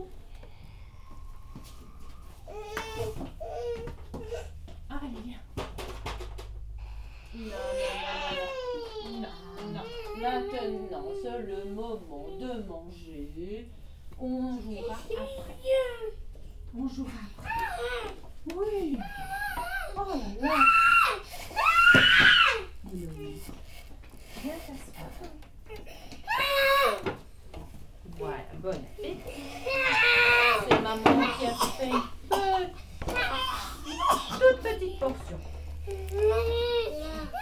Escalpade school is a place intended for children who have intellectual disability, learning disability and physical deficiency. This school do Bobath NDT re-education (Neuro Developpemental Treatment).
This recording is a lunch time. A child doesn't want to eat. With solicitude, professor invites him to come and eat.
Ottignies-Louvain-la-Neuve, Belgium, 24 March 2016, 12:10